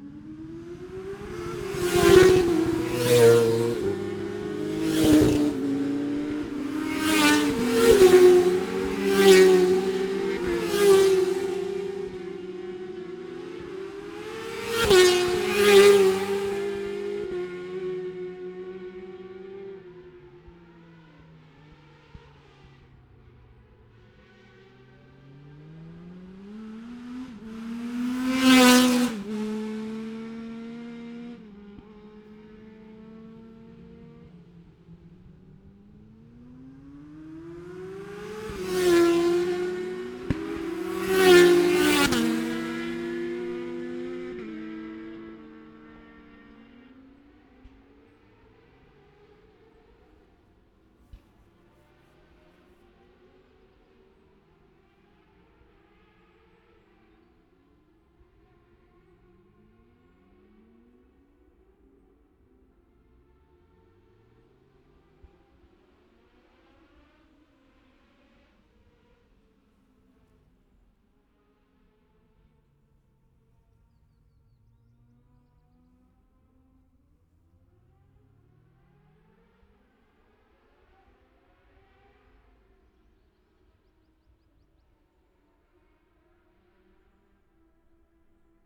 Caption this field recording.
600cc practice ... odd numbers ... Bob Smith Spring Cup ... Olivers Mount ... Scarborough ... open lavalier mics clipped to sandwich box ...